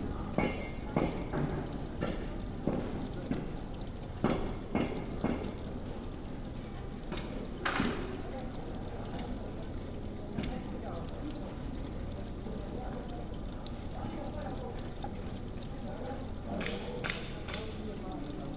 S. Polo, Venezia, Italien - campo s. polo
campo s. polo, s. polo, venezia